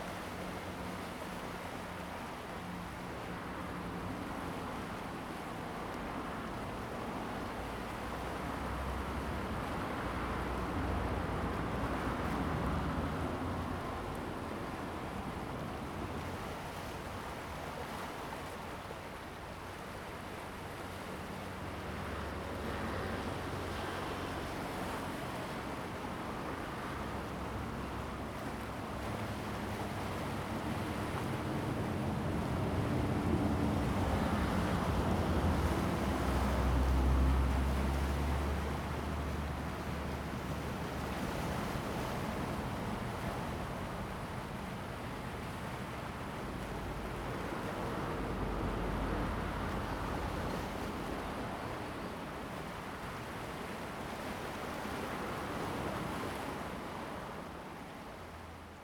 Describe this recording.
On the coast, traffic sound, Sound of the waves, Zoom H2N MS+ XY